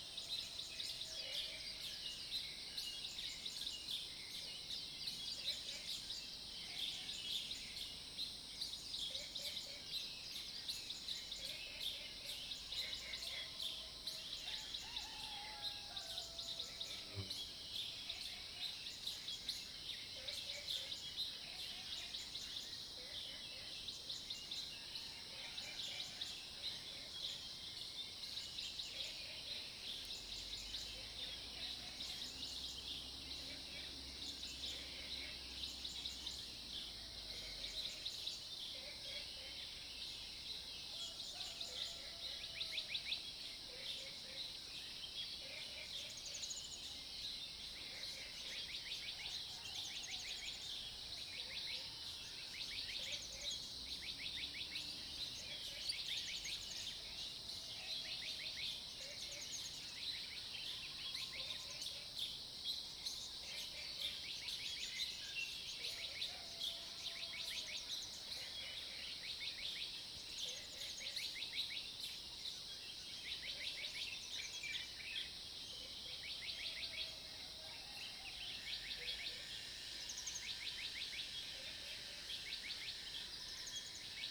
種瓜路4-2號, TaoMi Li, Puli Township - Early morning
Crowing sounds, Bird calls, Early morning
Zoom H2n MS+XY